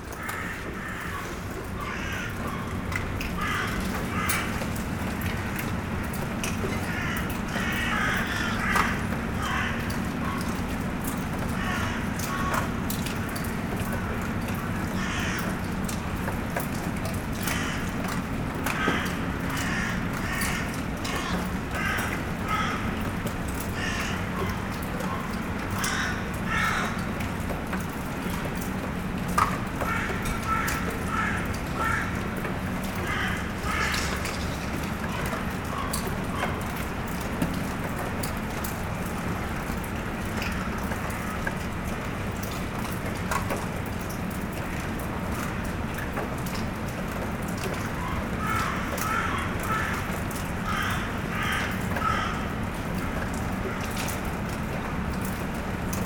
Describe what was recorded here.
General ambiance in the abandoned coke plant, from the mechanical workshop stairs. Crows are shouting and there's a small rain.